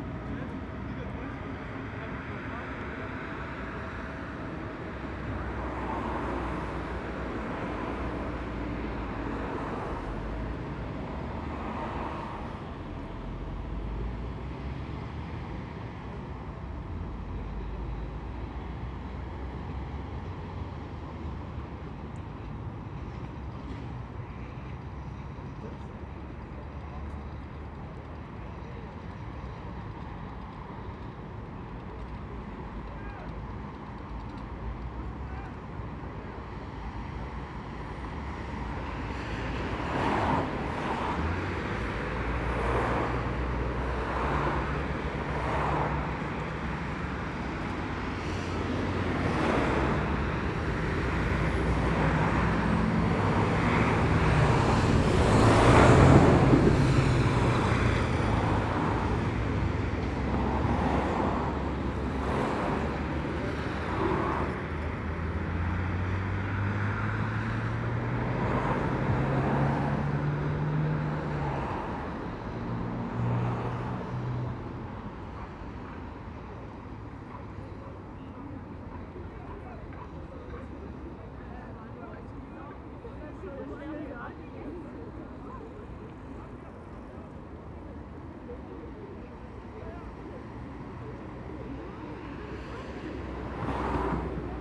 Freiham Bf., München, Deutschland - morning traffic Bodenseestrasse
Dense morning traffic in the Wild-West-Munich